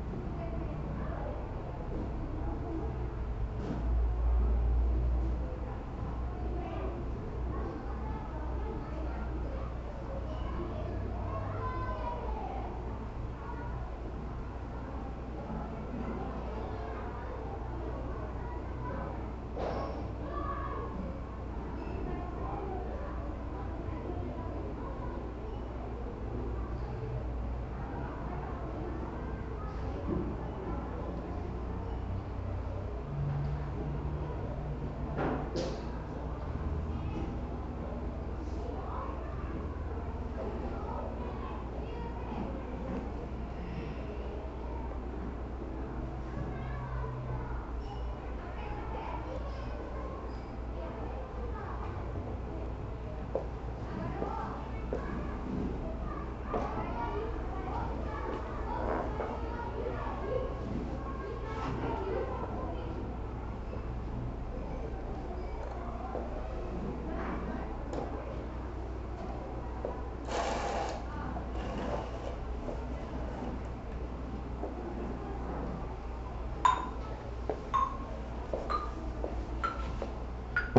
After appreciating various soundscapes on this site, we recorded the soundscape of the music classroom. The students remained silent for the first minute to record the sounds coming from outside the room. Then, each student received an instrument and participated in a free improvisation, creating the music throughout its performance. When students from another class passed through the classroom door, we returned to the silence and restarted playing later to finish our music.
Depois de apreciar várias paisagens sonoras no site, gravamos a paisagem sonora da sala de música. Os alunos permaneceram em silêncio durante o primeiro minuto para registrar os sons fora da sala de música. Em seguida, cada aluno recebeu um instrumento e participou de uma improvisação livre, criando a música à medida que ela era executada. Quando os alunos de outra turma passavam pela porta da sala, voltamos ao silêncio e só voltamos mais tarde para terminar a música.